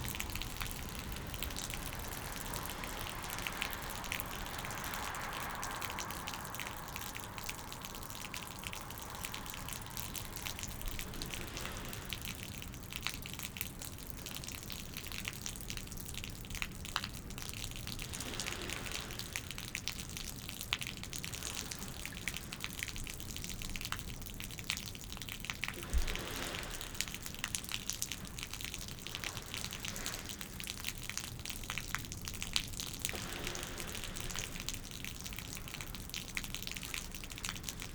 Harbour Rd, Seahouses, UK - broken guttering ...
broken guttering ... a gentle shower produces a steady flow ... bird call ... herring gull ... background noise ... lavalier mics clipped to baseball cap ...